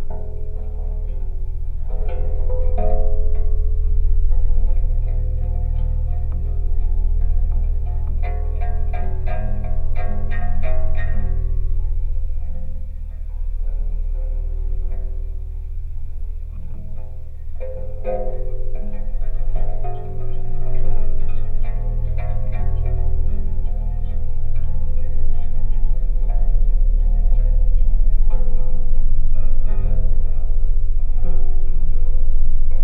Vosgeliai, Lithuania, cemetery gate
metalic detail on cemetery gate.